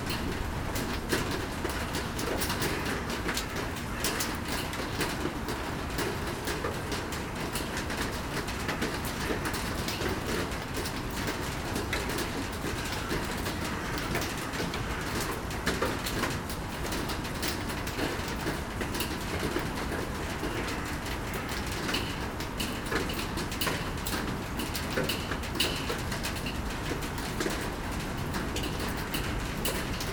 Seraing, Belgique - Rain and crows
In the abandoned coke plant, waiting in the tar and benzol section, while rain is falling. A lot of crows are calling and shouting. These birds love abandoned factories as it's very quiet, there's nobody.
Seraing, Belgium, 20 March 2017